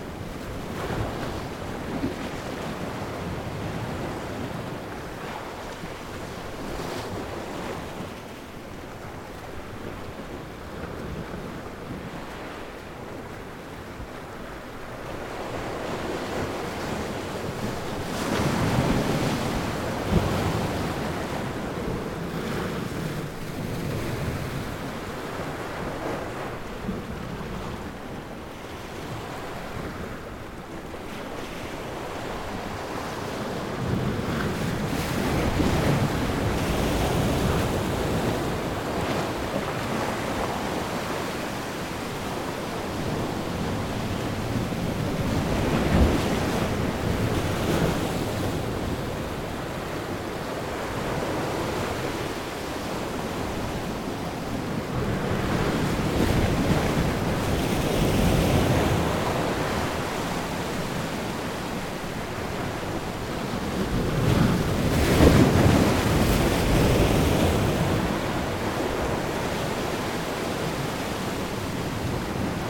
Île Renote, Trégastel, France - Waves crushing on a rock - from the side [Ile Renote ]
Marée montante. les vagues viennent s'écraser contre le flanc d'un rocher. Prise de son depuis le coté.
Rising tide. the waves crash against a rock. Heard from the side.
April 2019.
Bretagne, France métropolitaine, France, 22 April 2019